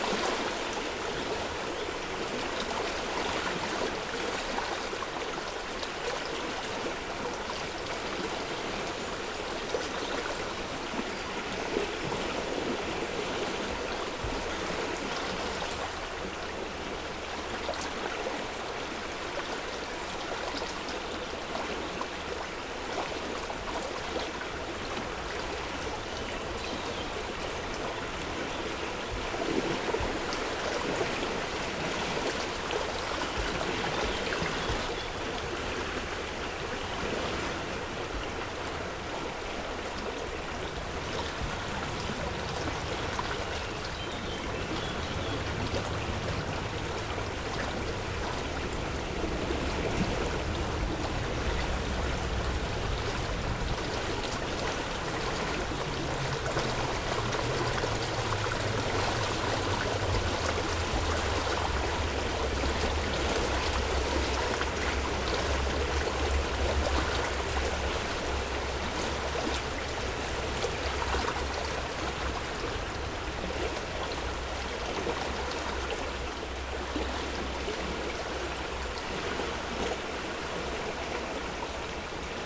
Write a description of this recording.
The Rhone is a majestic river flowing from Switzerland to a place called Delta in the Camargue area. This river is especially known for its urban course in the Rhone valley, marked by an intense industrial activity and an highly developed business using skippers by river. In Franclens village where we were, Rhone river is located upstream of Lyon, not navigable and in the forest. However it's not quiet. Contrariwise, water is dominated by the hydroelectric dams activity ; for us it's the Genissiat dam. During this recording, the Rhone underwent an enormous dump. Water violently leaves the bed. Unlike a filling, this activity establishes considerable turbulences and noise. It's a tormented atmosphere. But, at the heart of nature and although waters are very lively, it's still and always a soothing recording. Le Rhône est un fleuve majestueux prenant sa source en Suisse et débouchant dans le Delta en Camargue.